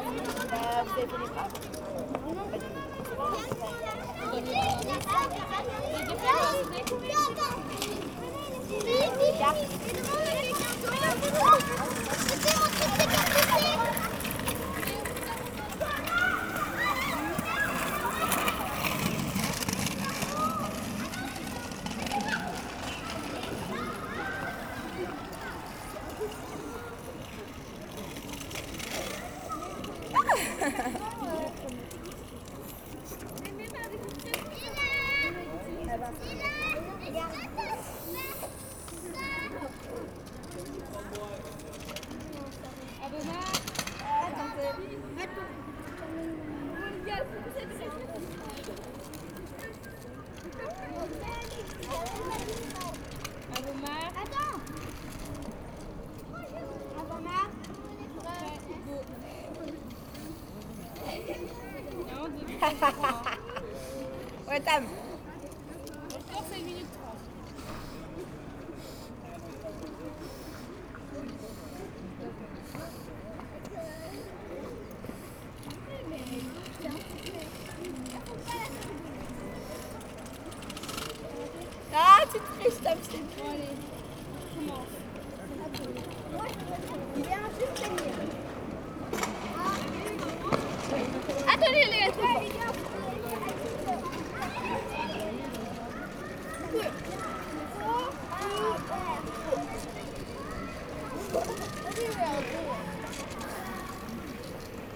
Centre, Ottignies-Louvain-la-Neuve, Belgique - Scouts playing
On the principal square of this town, sunday afternoon, young scouts are playing.